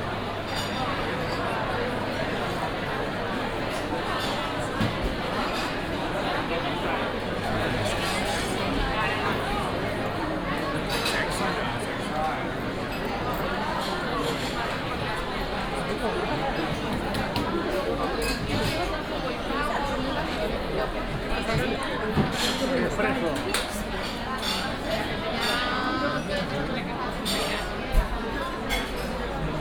Marid, Plaza San Miguel - Mercado de San Miguel
(binaural) Entering and walking around the San Miguel market. the hall is packed. people getting their tapas, paellas, calamari sandwiches, sweets, coffees, wines, whatsoever and dining at the tables, talking, having good time.
Madrid, Spain, 29 November 2014